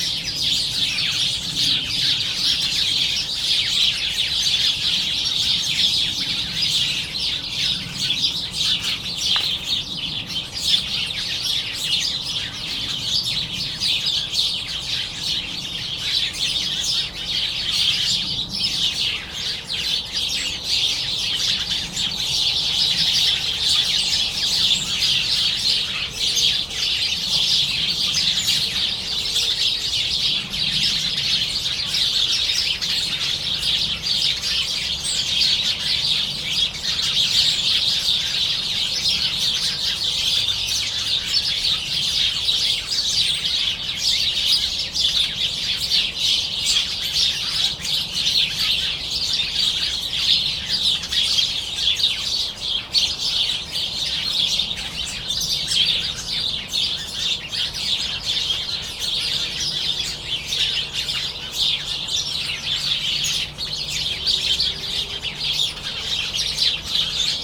Saint-Pierre-la-Garenne, France - Sparrows
The crazy sparrows are fighting on a tree, like they do every morning !